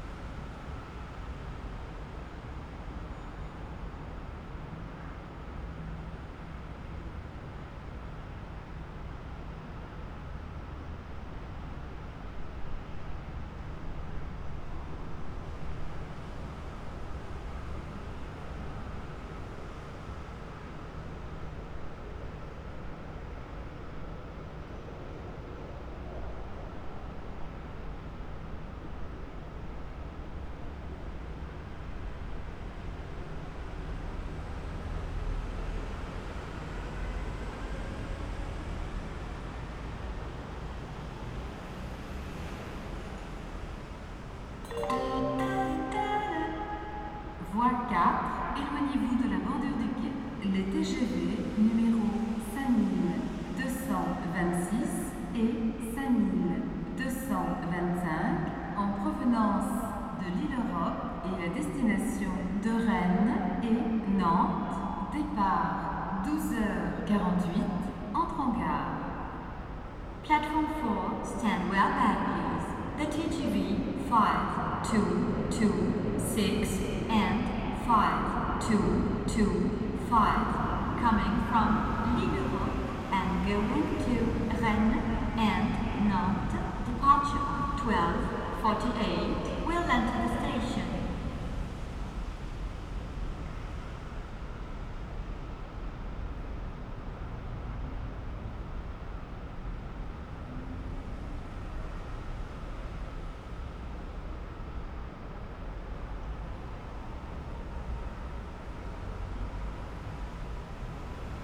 Large train station hall atmostphere, almost unmanned.Traffic noise from above. SNCF announcements and arrival of TGV on platform.
Ambiance de gare vide. Bruit de trafic, venant de dessus. Annonces SNCF et arrivée du TGV sur le quai.